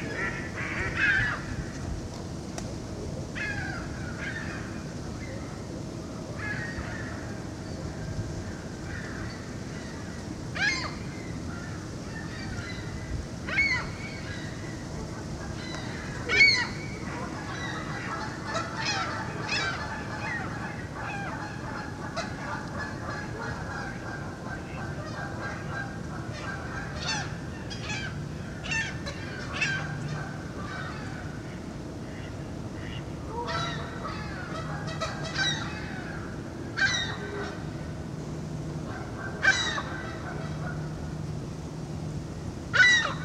The "Centre de la nature de Boisbriand" is a small park along the Milles-Iles river where you can relax in nature watching and listening to birds, squirrels.
In this recording you can ear some ducks, gulls, Canada goose, and a Cooper Hawk couple sorry for the plane at the end :)
Enjoy !
Recorder: Zoom H2N with a homemade stereo microphone.